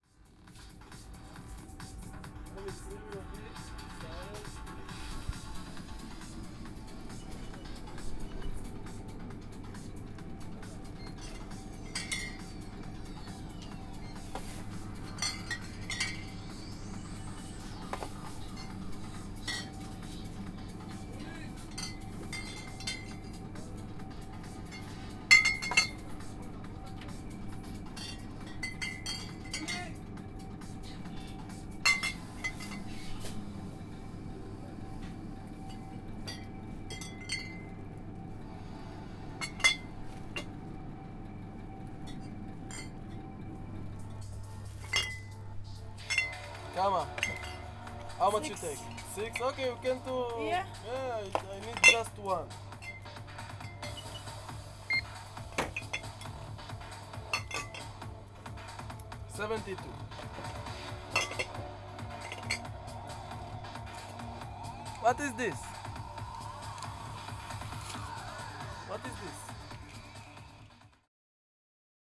Ha-Neviim St, Jerusalem, Israël - Bottles, techno and fridges
Buying some soft beers in a local night shop: techno music and the sound of bottles en fridges. (Recorded with ZOOM 4HN)
28 January 2014, 21:18